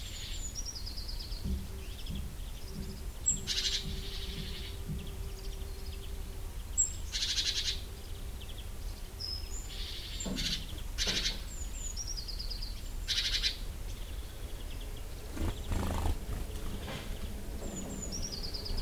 Arroyo de Humaina, Malaga, Spain - Morning by Humaina hotel
Morning outside of Humaina hotel - birds (Great Tit, Blue Tit, Blackbird), some sounds from downstairs kitchen.
Málaga, Spain, 13 December, 08:30